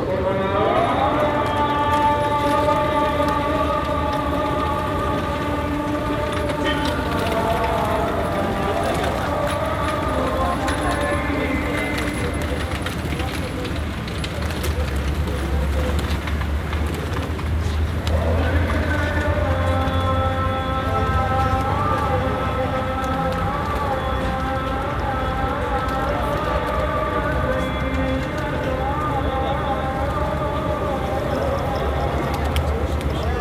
Pigeons and Adhan near Mosque
pigeons and Adhan in front of a Mosque in Istanbul